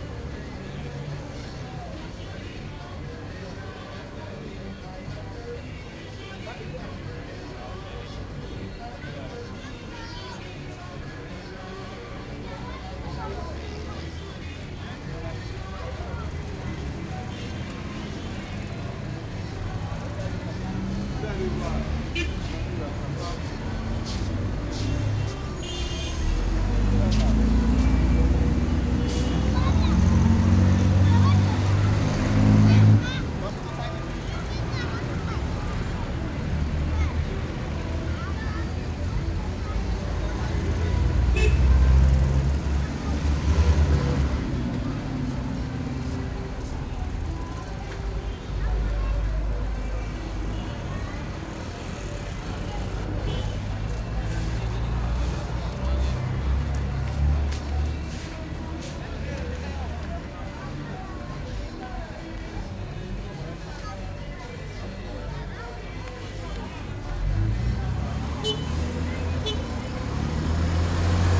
enregistré sur le tournage de bal poussiere dhenri duparc